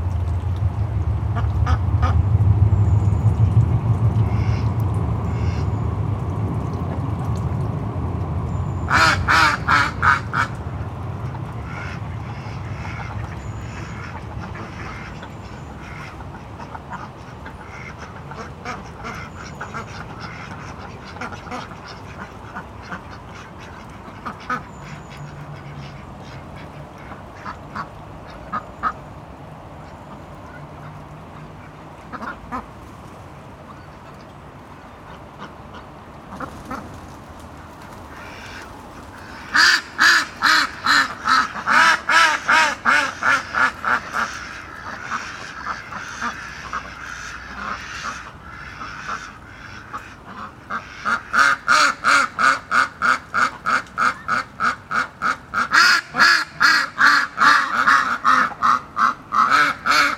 Ouseburn Farm, Ouseburn Rd, Newcastle upon Tyne, United Kingdom - Ducks at Ouseburn Farm
Walking Festival of Sound
13 October 2019
Ducks at Ouseburn Farm
mono recording (saved as Stereo file), DPA4060, Sound Devices MixPre6
Location: Ouseburn Farm
Ouseburn
Newcastle upon Tyne
54.975419, -1.590951
North East England, England, United Kingdom, 13 October 2019